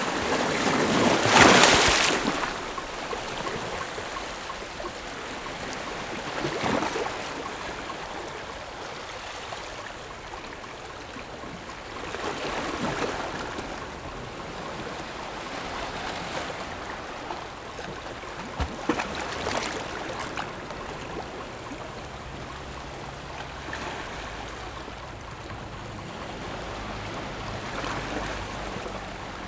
Shimen Dist., New Taipei City - The sound of the waves